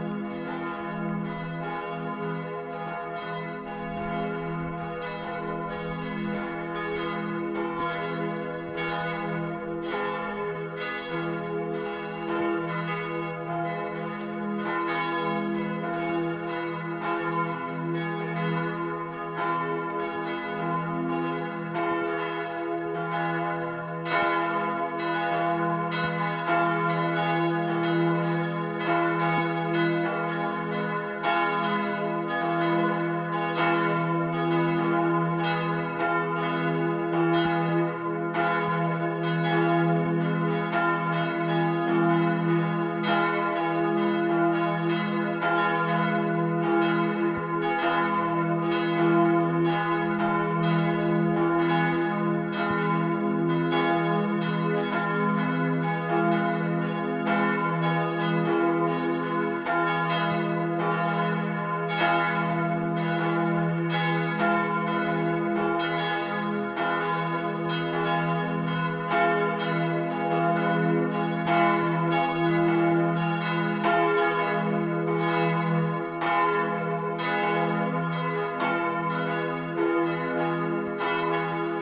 Tychona Braha, Benátky nad Jizerou I, Benátky nad Jizerou, Czechia - nedělní zvony
Sunday noon bells on the bell tower of the Kostel Narození Panny Marie, from above and under
10 March, 12:00